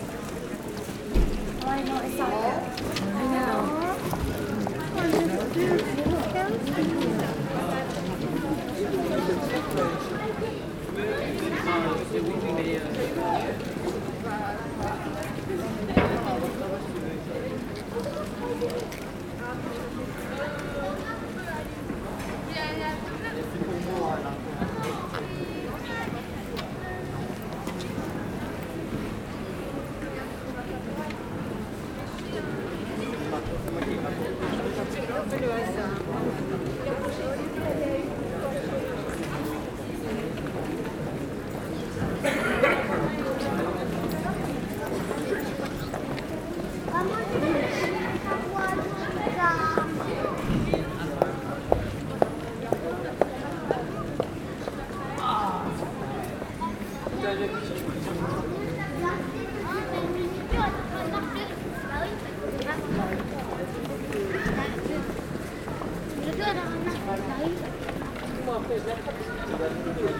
People walking in a beautiful and pleasant pedestrian way.
Chartres, France, December 2015